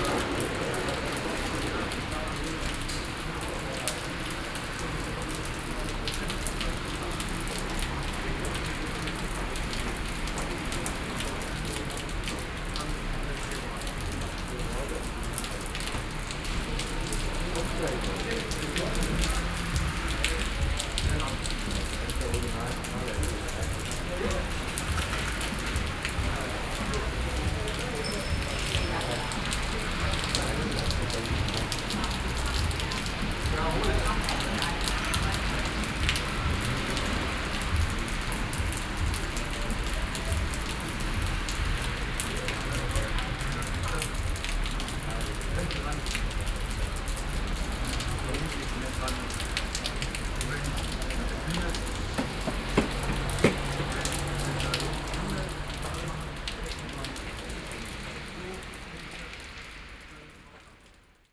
The street in front of the shopping center "City Center" an a rainy afternoon.
Schwäbisch Gmünd - Zentrum (C.), Germany, 12 May 2014, ~15:00